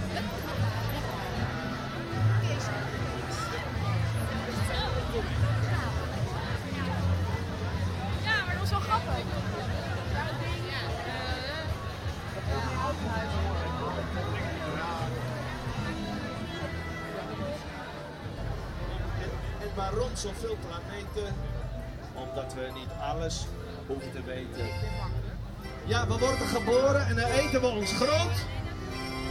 Zoom H2 recorder with SP-TFB-2 binaural microphones.
The Hague, Netherlands, 7 July 2011, 20:00